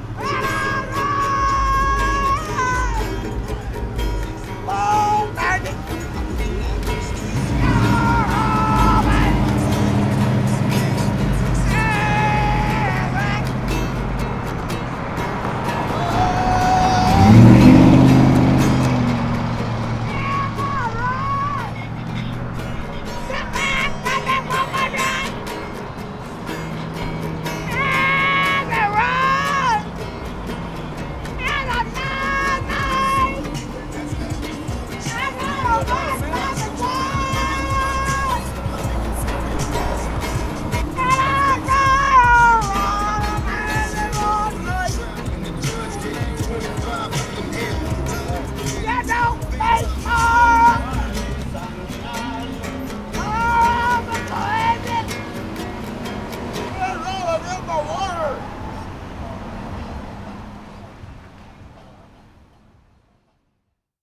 Free Man, Houston, Texas - Drunken Outsider Plays Santana
My daily commute has taken me past this wailing outsider almost every day for the last two years. I've usually passed him at speed so was never able to discern what song he was playing - until I finally approached him and asked for a tune..
Harris County, Texas, United States of America, 20 April 2013, 14:18